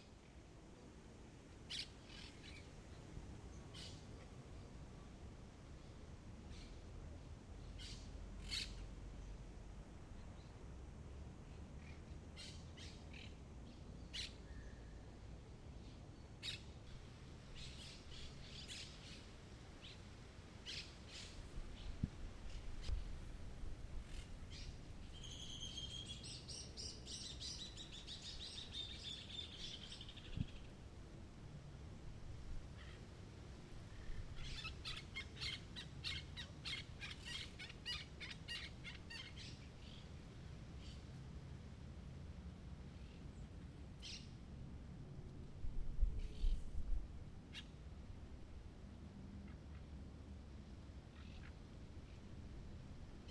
Jardín Botánico, Montevideo, Uruguay - unos papagayos salvajes gritan
wild green parrots screaming
26 March, 16:30